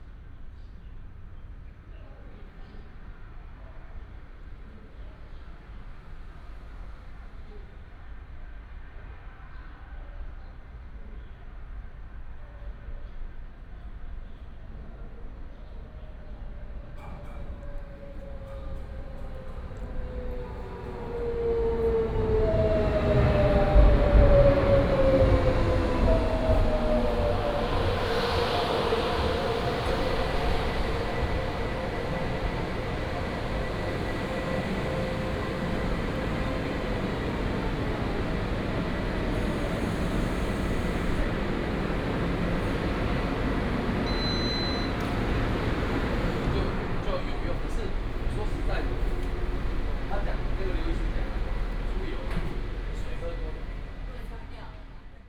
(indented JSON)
{
  "title": "Tongxiao Station, 苗栗縣通霄鎮 - At the station platform",
  "date": "2017-03-24 12:05:00",
  "description": "At the station platform, Train arrived",
  "latitude": "24.49",
  "longitude": "120.68",
  "altitude": "12",
  "timezone": "Asia/Taipei"
}